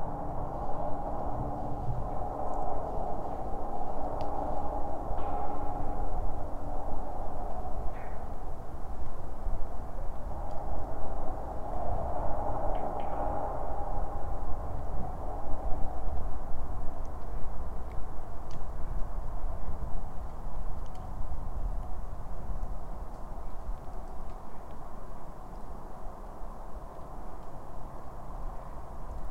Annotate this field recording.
I ofter return to the favourite listening places. Especially if it is some abandoned object, half decayed buildings, relicts from soviet era. The abandoned metallic watertower hidden amongst the trees - it is practically unseen in summertime. And it stands like some almost alien monster in winter's landscape. The sound study. Small omnis placed inside of pipe and contact mics on the body of tower.